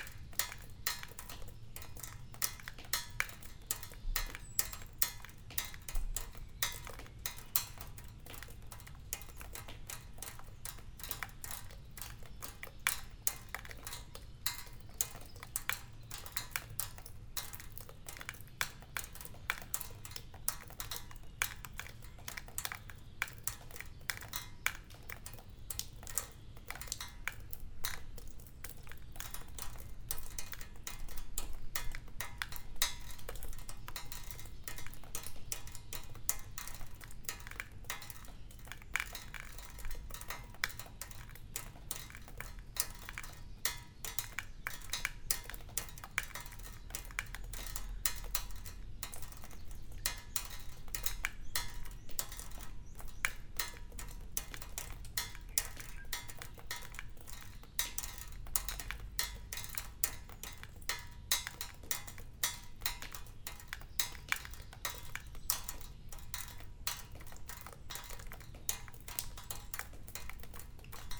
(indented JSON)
{
  "title": "Aincourt, France - Abandoned sanatorium",
  "date": "2018-05-27 12:00:00",
  "description": "Sound of the drops inside an abandoned sanatorium. Because of vandalism, everything is broken. Drops fall on a metal plate.",
  "latitude": "49.08",
  "longitude": "1.76",
  "altitude": "190",
  "timezone": "Europe/Paris"
}